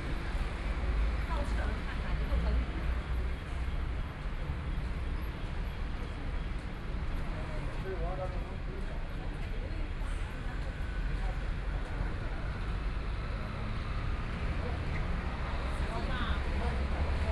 Gengsheng Rd., Taitung City - At the roadside
Traffic Sound, Tourists, Binaural recordings, Zoom H4n+ Soundman OKM II ( SoundMap2014016 -1)